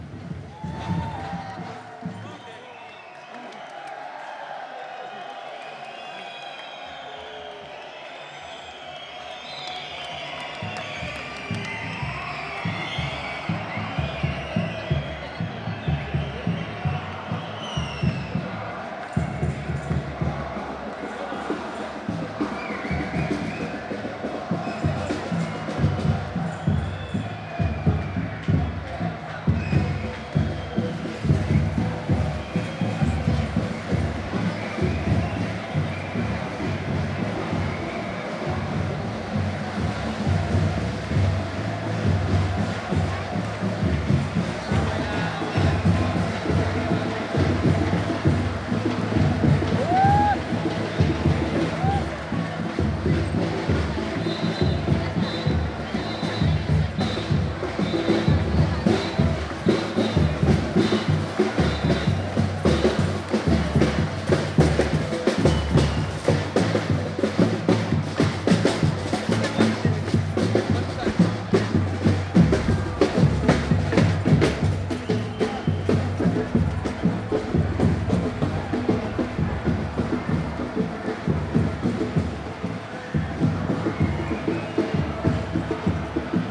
Ramblas, Barcelona, Spain - Papeles Demonstration
Demonstration of people "without papers" Drums, cheering. Sony MS mic